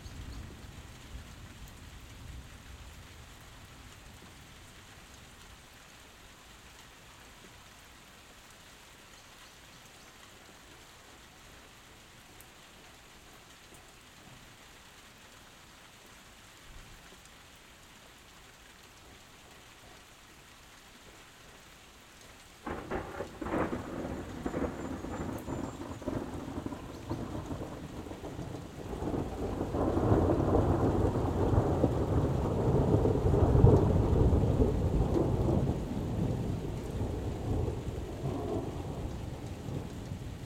Birdwood Rd, Cambridge, UK - City Thunderstorm
Thunderstorm recorded from garden under shelter. Birds singing and searching for food, passing traffic and general street noise can be heard.
Zoom F1 and Zoom XYH-6 Capsule